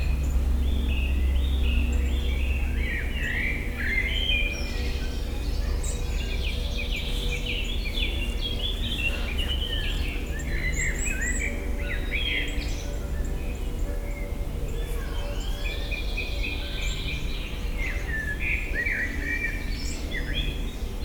Waldpark, Mannheim, Deutschland - Vögel und die Glocken
Waldpark, dichter Laubwald, buntes Treiben der Vögel, Kirchenglocken laden zum Samstagabend-Gottesdienst ein
Baden-Württemberg, Deutschland, 14 May 2022, 17:56